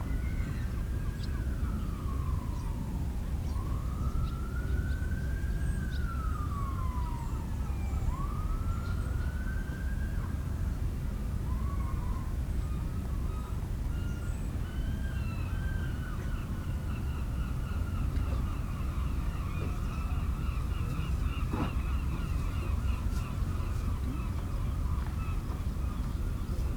Whitby, UK - St Marys Church clock striking 12:00 ...
St Mary's clock striking midday ... voices ... people walking around the church yard ... noises from the harbour ... open lavalier mics clipped to sandwich box lid ... perched on rucksack ...